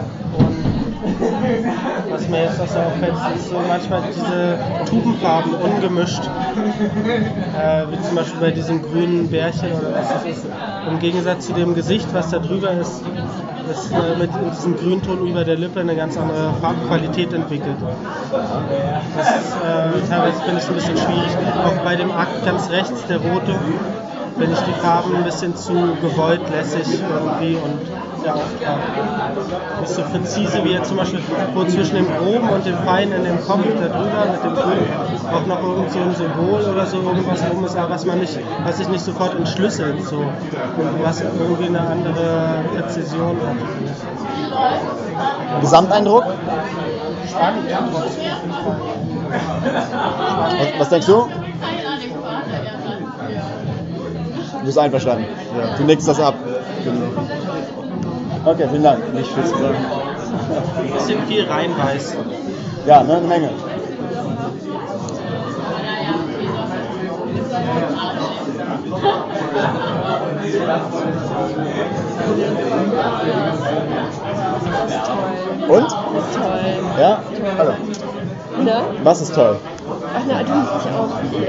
Ein Bild macht durch, Der Kanal, Weisestr. - Ein Bild macht reden, Der Kanal, Weisestr. 59
Samstag Abend. Nach 24 Stunden hängt das Triptychon. Es dringt von der Wand durch das Schaufenster auf die Straße. Die Gäste kommen. Das Bild macht reden.
Deutschland, European Union